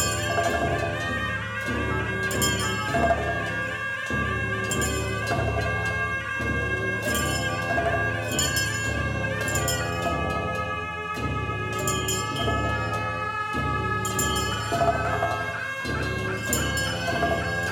Mang gi Rimdro(puja), Dho Jaga Lama, Phaduna DSP center, Choki Yoezer, M-5